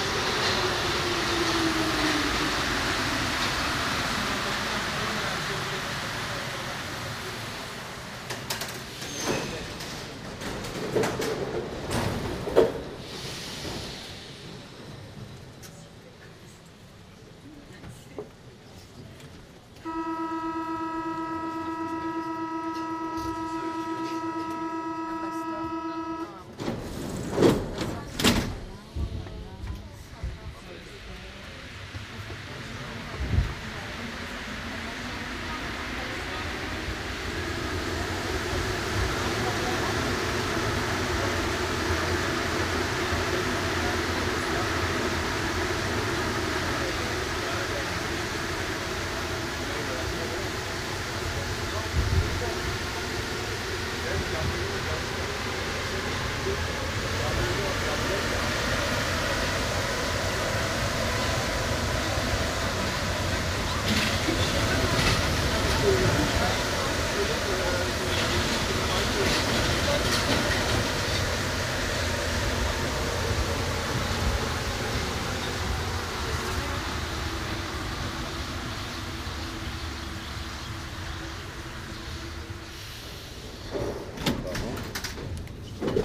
The whole metro trip from Raspail to Trocadéro, Paris. Note the terrible singer around 920. Binaural recording.
11 September 2010, 12:07